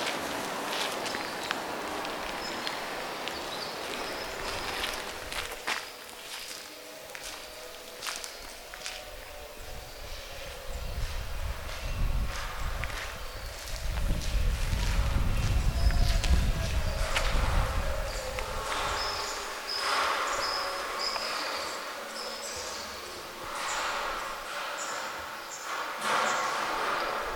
Cerezales del Condado, León, España - Miguel González: Paseo sonoro por el Auditorio de la Fundación Cerezales
Miguel González: Paseo sonoro por el edificio en construcción del Auditorio de la Fundación Cerezales